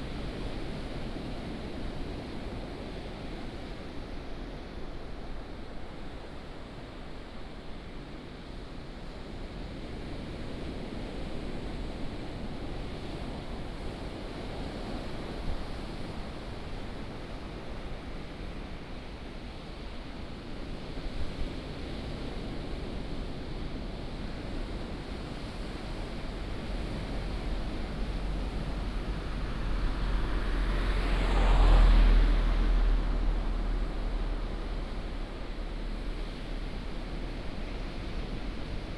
牡丹鄉台26線, Pingtung County - On the coast
On the coast, Sound of the waves, traffic sound